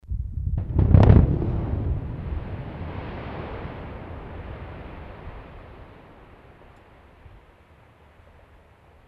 wülfrath, abbaugelände fa rheinkalk, sprengung - wülfrath, abbaugelände fa rheinkalk, sprengung 2
früjahr 07 maittags tägliche sprengung, in europas grösstem kalkabbaugebiet - hier direkt - monoaufnahme
project: :resonanzen - neandereland soundmap nrw - sound in public spaces - in & outdoor nearfield recordings
24 June 2008